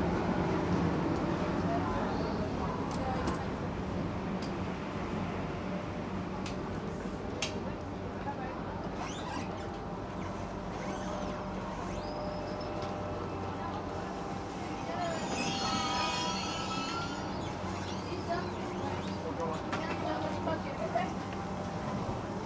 Gare de la Part-Dieu, Lyon, France - Platform ambience at the station
Trains annoucement, TGV coming on the Platform.
Tech Note : Sony PCM-M10 internal microphones.
France métropolitaine, France, July 2022